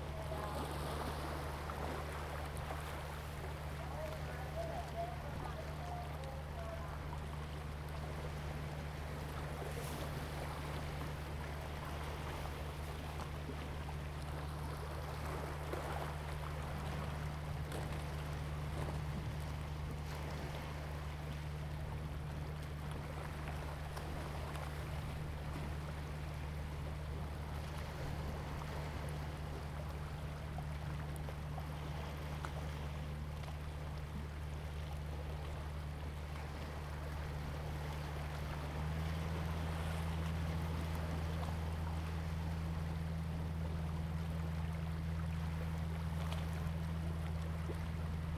菓葉村, Huxi Township - On the bank
On the bank, Tide, Near the fishing port
Zoom H2n MS +XY
Penghu County, Huxi Township, 21 October 2014